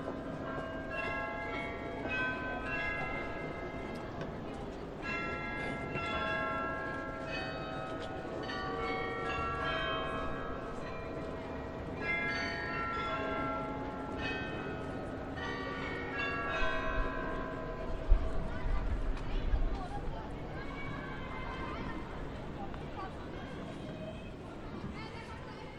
munich, 2008, the Rathaus-Glockenspiel chimes, invisisci